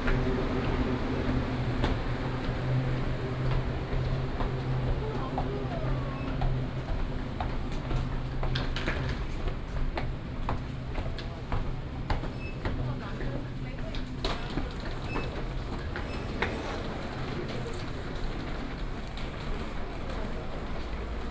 民雄火車站, Chiayi County - Walking at the station
Walking at the station, lunar New Year, From the station platform through the hall to the exit direction
Binaural recordings, Sony PCM D100+ Soundman OKM II
Minxiong Township, 民雄火車站, 15 February 2018